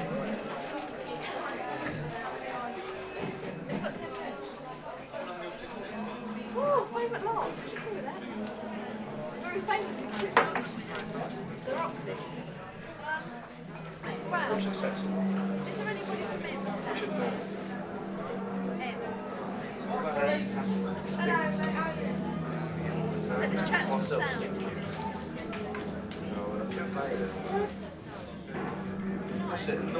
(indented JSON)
{
  "title": "The Roxy, London, April 1977",
  "latitude": "51.51",
  "longitude": "-0.13",
  "altitude": "38",
  "timezone": "GMT+1"
}